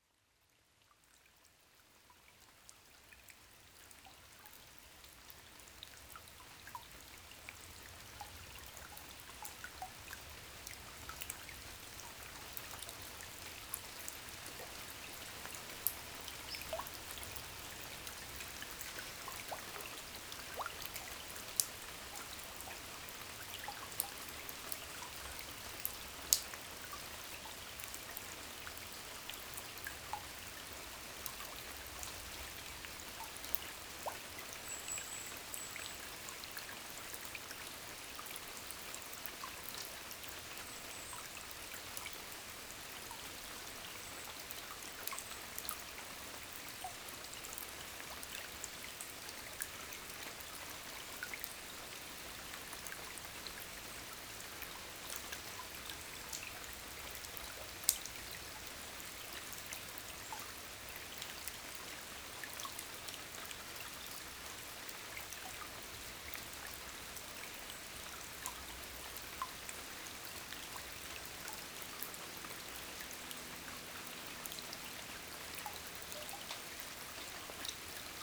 During a long and sad rain, I'm a refugee inside an old wash-house. The Drouette river is flowing quietly. White Wagtail are shouting, quickly a Common Kingfisher is flying.